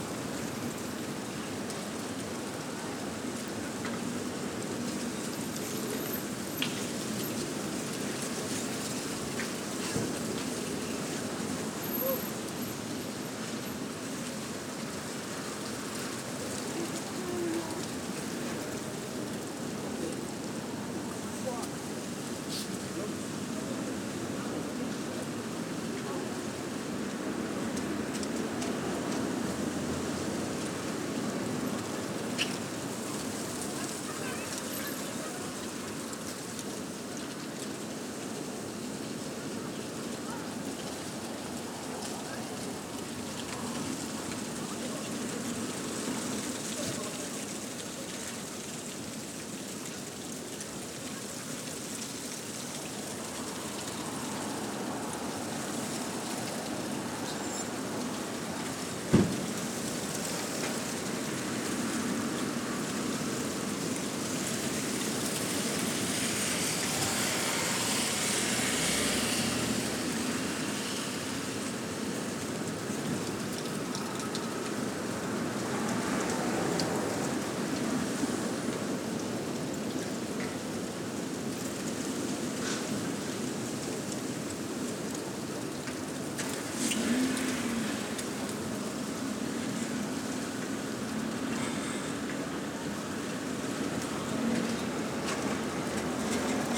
Brabanter Str., Köln, Deutschland - Storm Eunice
Storm Eunice recorded with the small Roland Wearpro Mics which have spent the last 18 months outside in front of my window. No doubt the greatest fun to be had for under 10 euros.
Nordrhein-Westfalen, Deutschland, 18 February 2022